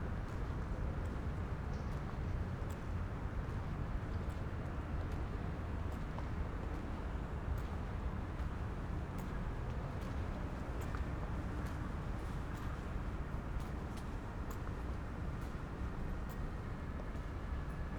Keibelstraße, Berlin, Deutschland - downtown residential area
short walk, evening in late summer, still warm, it's dark, a short walk in Keibelstr., downtown residential area, almost no people on the street, emptyness in concrete, distant traffic hum, ventilations
(Sony PCM D50, Primo EM172)